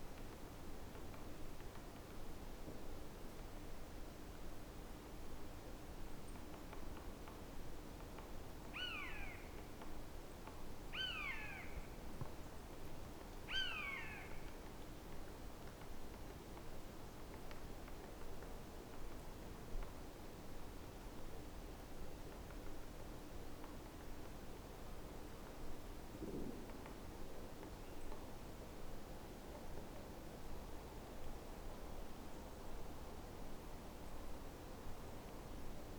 Loka, river Drava - forest, covered with snow, buzzard